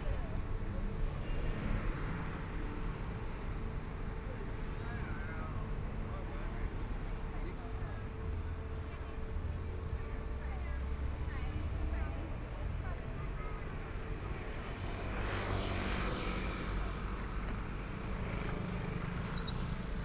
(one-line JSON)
{"title": "Lequn 3rd Rd., Taipei City - Environmental sounds on the street", "date": "2014-02-16 18:57:00", "description": "Environmental sounds on the street, Traffic Sound\nPlease turn up the volume\nBinaural recordings, Zoom H4n+ Soundman OKM II", "latitude": "25.08", "longitude": "121.55", "timezone": "Asia/Taipei"}